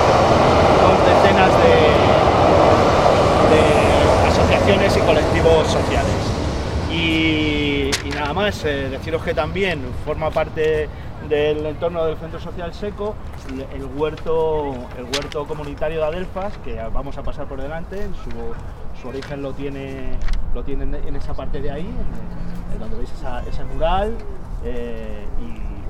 C.S. Seco, calle Luis Peidró, Madrid - Pacífico Puente Abierto - Transecto 00 - C.S. Seco, inicio del paseo
Pacífico Puente Abierto - Transecto. Inicio del recorrido, C.S. Seco
Madrid, Madrid, Spain, 7 April 2016, 18:30